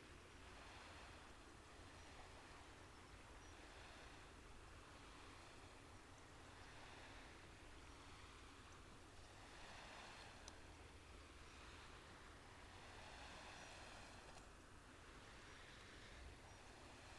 Alikes, Pieria, Greece - 25th Sunset.